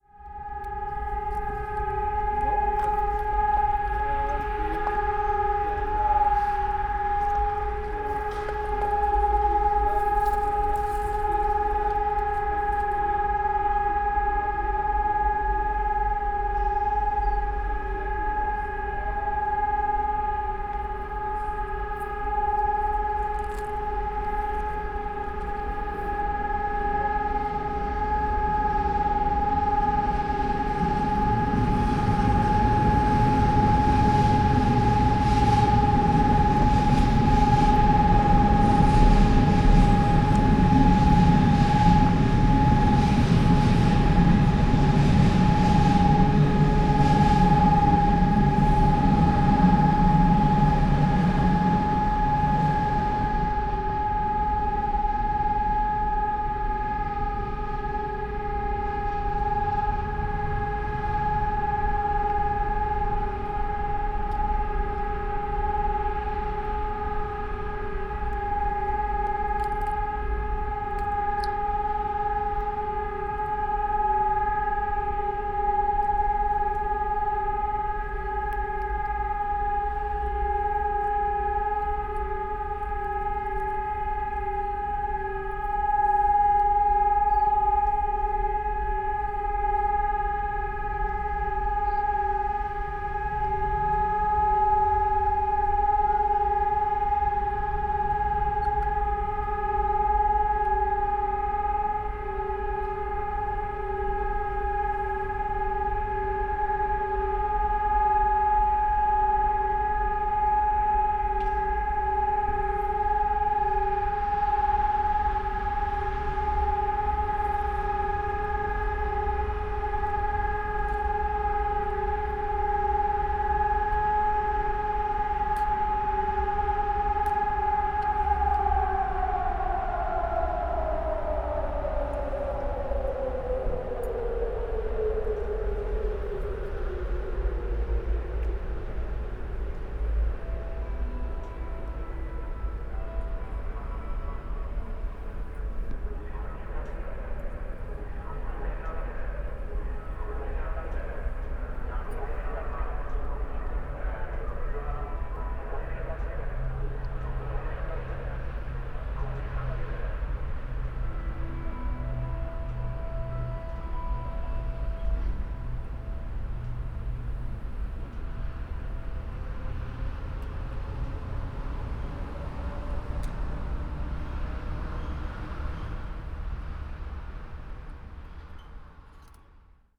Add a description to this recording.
while exploring an abandonded wasteland in between buildings and train tracks, suddenly many sirens started and created strange sonic pattern all over the place. (SD702, DPA4060)